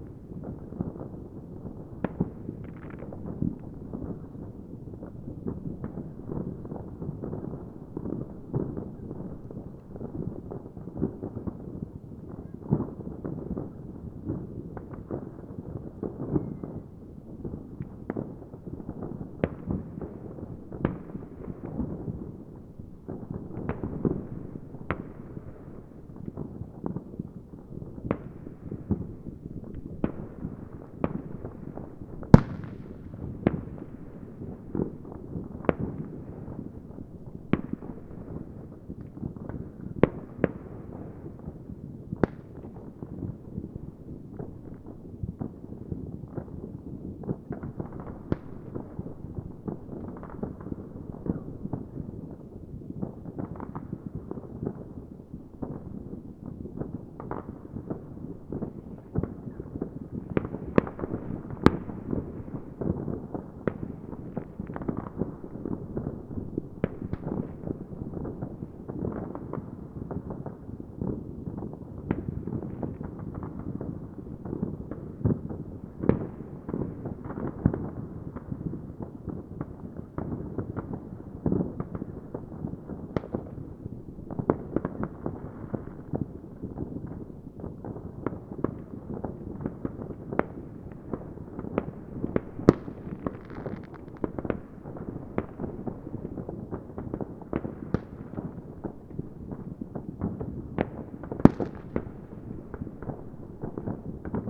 geesow: salveymühlenweg - the city, the country & me: new year's eve fireworks
on a hill overlooking the lower oder valley, new year's eve fireworks from around the valley, church bells
the city, the country & me: january 1, 2014